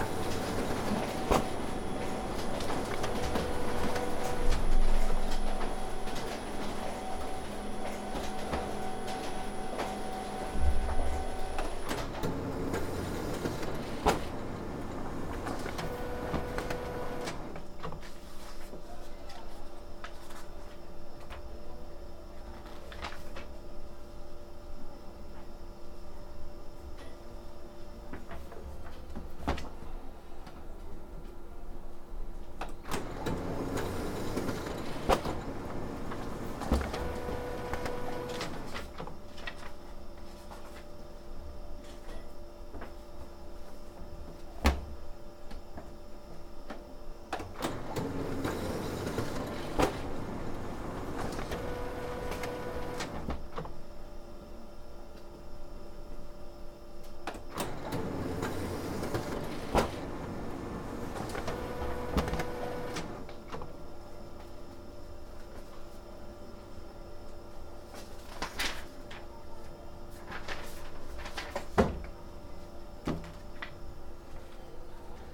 JHB Building, Oxford Brookes University - Headington Campus, Gipsy Lane, Oxford, Oxfordshire OX3 0BP - Photocopying in the LIbrary
Photocopying things in the Oxford Brookes Library for a workshop I'm giving in a week's time. I can't actually see the JHB building on the Satellite view as the view seems not to have been updated since the new building work has finished, but I'm pretty sure the sound is in the correct place in relation to the recognisable (and remaining) architectural features of the campus. The new JHB building is all open plan with very high ceilings, so chatter drifts in when there are pauses in the techno rhythms of the photocopier.
Oxford, Oxfordshire, UK, 1 April 2014, ~14:00